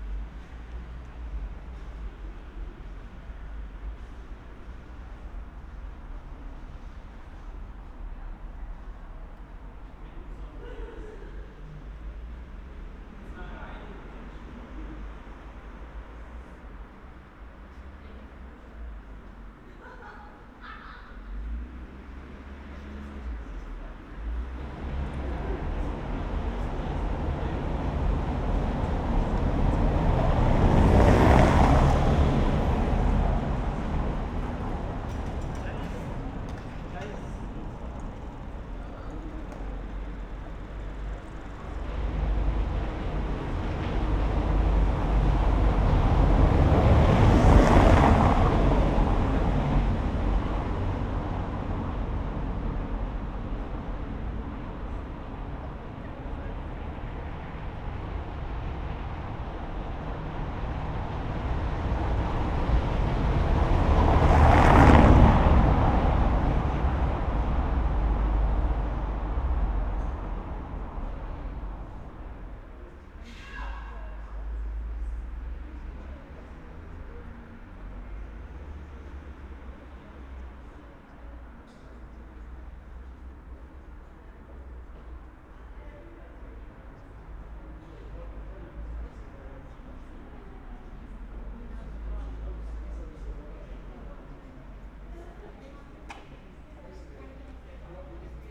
berlin: friedelstraße - the city, the country & me: night-time ambience
cyclists, passers by, taxis
the city, the country & me: may 11, 2013
Berlin, Germany, 11 May 2013, 03:03